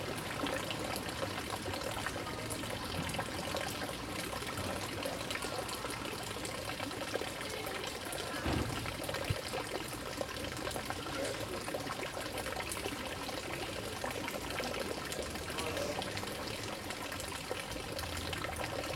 Gars am Kamp, Österreich - village well
Niederösterreich, Österreich